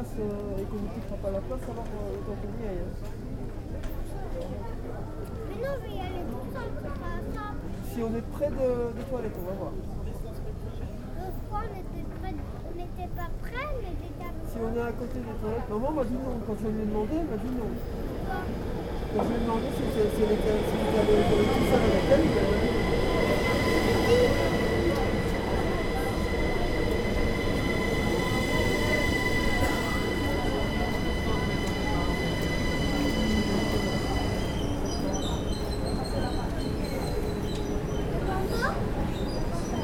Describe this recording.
Long ambience of the platforms in the big train station of Brussels North.